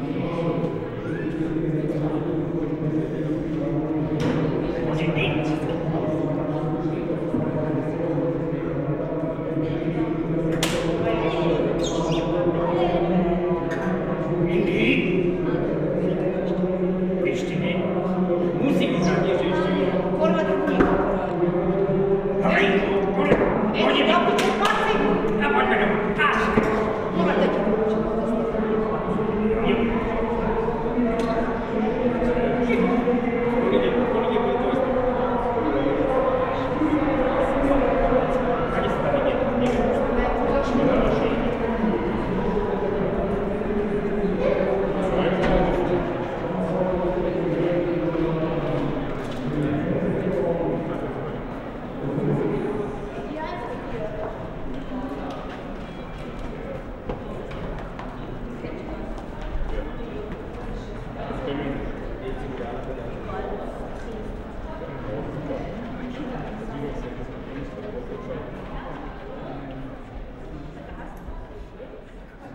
dahlwitz-hoppegarten: galopprennbahn, wetthalle - the city, the country & me: racecourse, betting hall
before and between the fifth race (bbag auktionsrennen), betting people
the city, the country & me: may 5, 2013